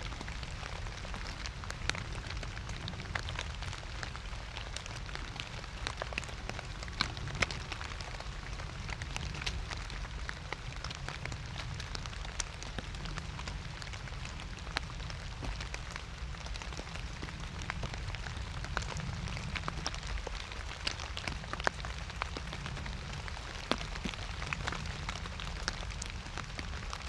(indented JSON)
{
  "title": "Rain, trains, clangy bells, autumn robin, ravens, stream from the Schöneberger Südgelände nature reserve, Berlin, Germany - Rain on crackly autumn leaves and passing trains",
  "date": "2021-11-27 17:22:00",
  "description": "Schöneberger Südgelände is located on the former Tempelhof railway yard. Since it's closure nature has reclaimed the area. Rusty tracks still run through it and preserved trains and rail infrastructure are part of the now wooded landscape. Because of its industrial past it has a totally unique ecology unlike anywhere else in Berlin (300 moss species are found there). It lies between today's SBahn and the current main line south from the city, so trains at full power, or slowly passing by, are dominant events in the soundscape. These recordings are taken from a 24hr stream set up in late November. This one is at night during light rain. The microphones are hidden under fallen leaves onto which the rain drops. it is windless.",
  "latitude": "52.46",
  "longitude": "13.36",
  "altitude": "45",
  "timezone": "Europe/Berlin"
}